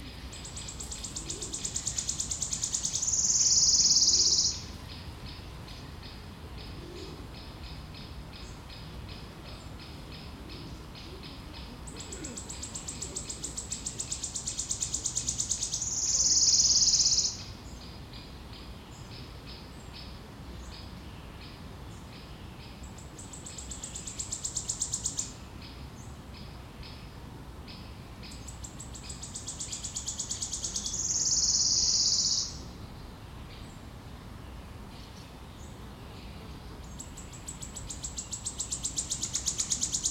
There are bird trills in the forest in this nice and warm sunny day. Human voices, dog barking and the voices of other birds are also heard sometimes.
Recorded with Zoom H2n, 2ch surround mode.